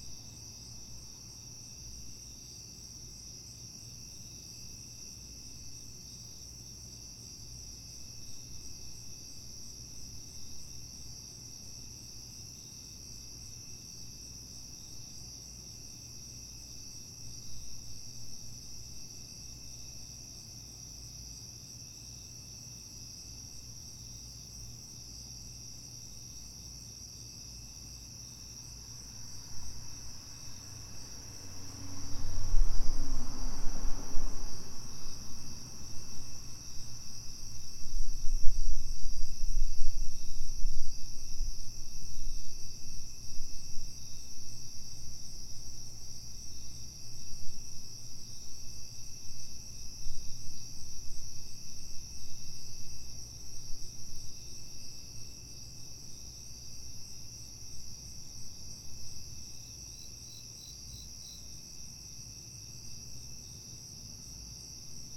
Leesburg, VA, USA - Nighttime sounds
Sounds of a summer night in Leesburg, VA. Recorded on a Tascam DR-07MKII with internal mics in A-B (wide stereo) position.
Post-processing included: trimming start and finish (with fades), cutting two small sections of distortion in the middle, and normalizing.
Recording starts at about 12:33am on August 16, 2015.
16 August 2015